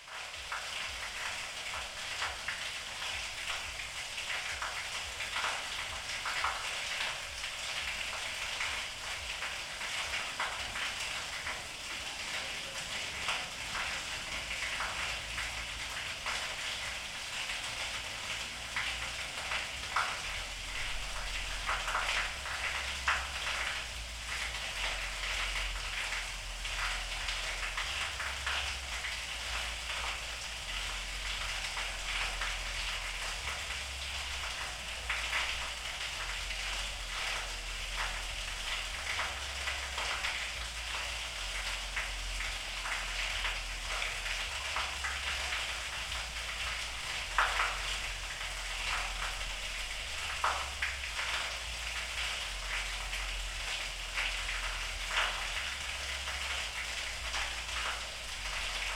Tallinn, Kopli, manhole
manhole at slope near street
Tallinn, Estonia, 17 April